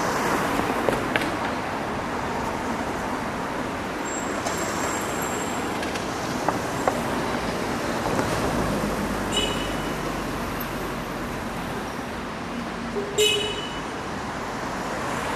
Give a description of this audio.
Fullmoon on Istanbul, descending towards Osmanbey